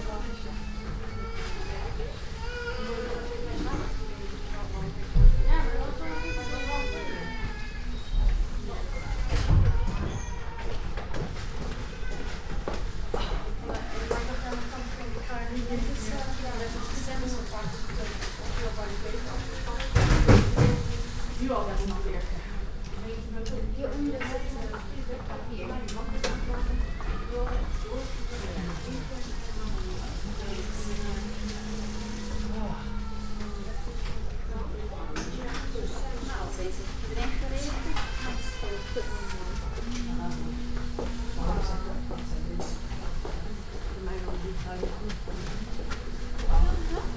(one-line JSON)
{"title": "Amsterdam Airport Schiphol - toilet, ladies", "date": "2014-10-27 10:10:00", "description": "cleaning women and her song", "latitude": "52.31", "longitude": "4.76", "altitude": "2", "timezone": "Europe/Amsterdam"}